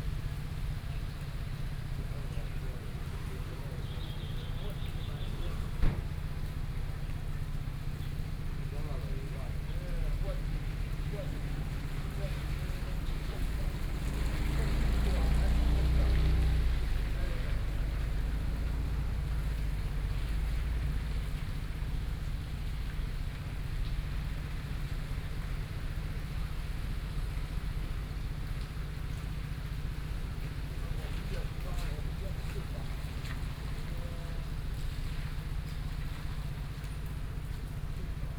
Yilan Station, Taiwan - Rainy Day
In the square in front of the station, Conversation between a taxi driver sound, Rainy Day, The traffic noise, Zoom H4n + Soundman OKM II
Yilan County, Taiwan, 5 November 2013